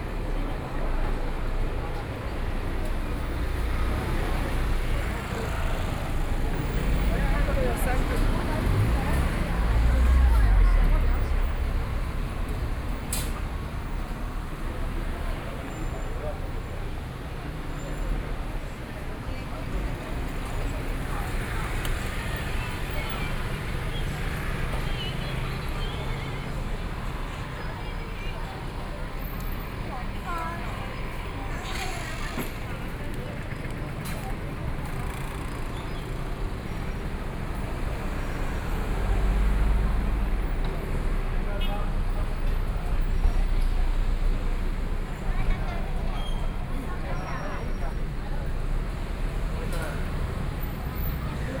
Traffic Sound, walking on the Road, Various shops sound, Tourist, The sound of a train traveling through the neighborhood
Sony PCM D50+ Soundman OKM II
Yilan County, Taiwan, 26 July, 18:56